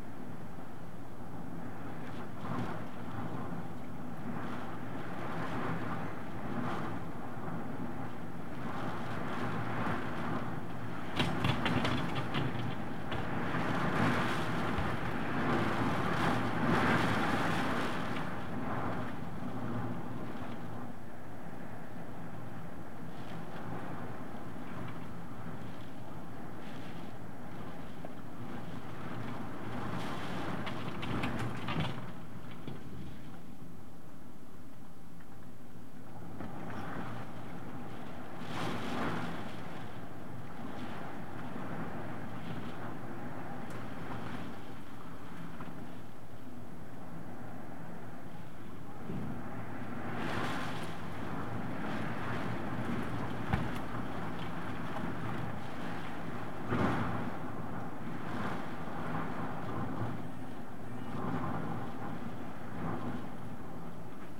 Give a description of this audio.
Lorenzo Hurricane beating the window where i was sleeping at a frightening night.